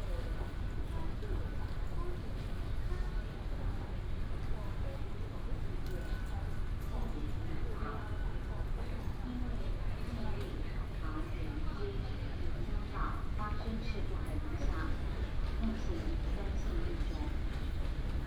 Taipei Station, Taipei city, Taiwan - In the station hall
In the station hall, Station information broadcast
Taipei City, Zhongzheng District, 台北車站(東三)(下客), 3 March 2017